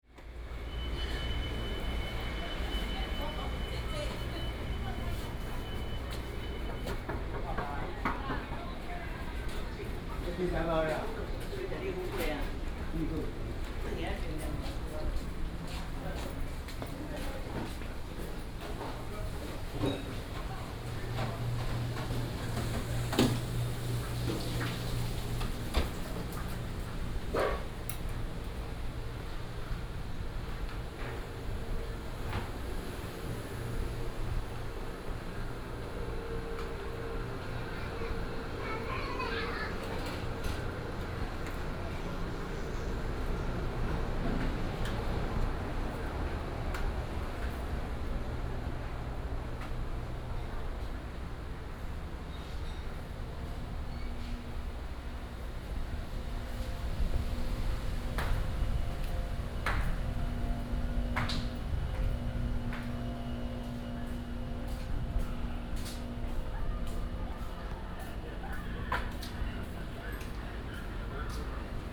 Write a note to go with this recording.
Old traditional market, Walking on the first floor of the old market